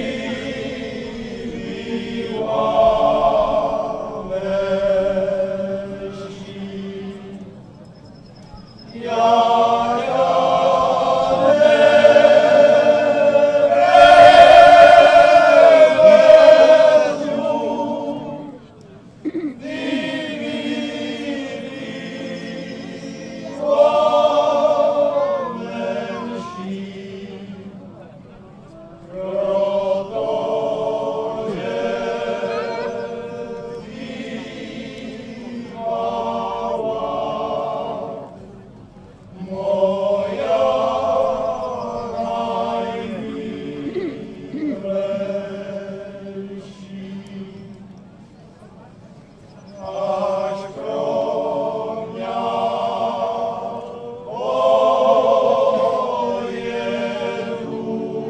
Kuželov, wind mill, hornacke slavnosti
traditional folklor feast at Kuzelov, happening every july. local people from several villages around singing and dancing.
24 July 2011, 19:56